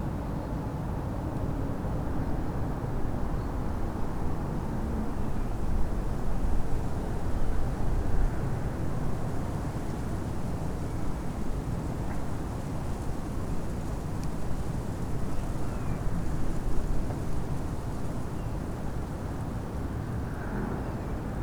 burg/wupper: nähe diederichstempel - the city, the country & me: leaves scattering over the ground
the city, the country & me: february 8, 2012
Solingen, Germany, 8 February 2012